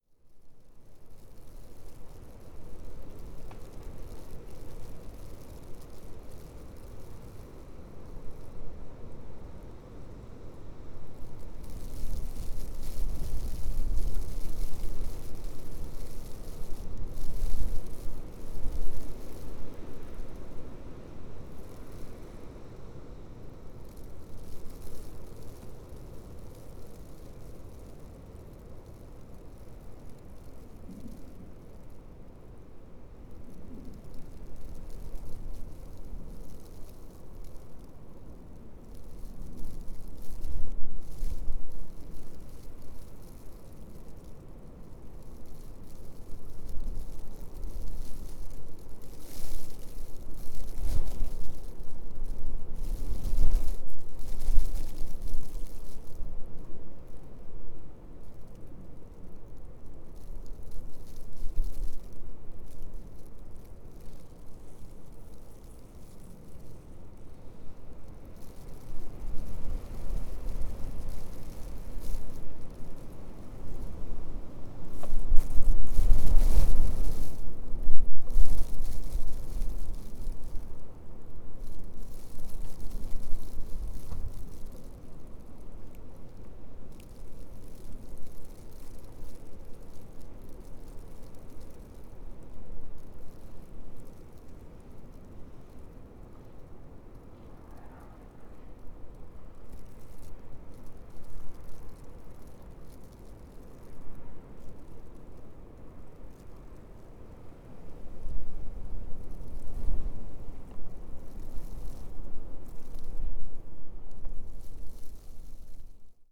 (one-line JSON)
{"title": "Punnetts Town, UK - Gusty Wind, Icy Snow", "date": "2017-01-12 19:20:00", "description": "First snow of Winter 16/17 with wind gusting. Tascam DR-05 placed on fence post with wind muff using internal microphone.", "latitude": "50.96", "longitude": "0.31", "altitude": "130", "timezone": "GMT+1"}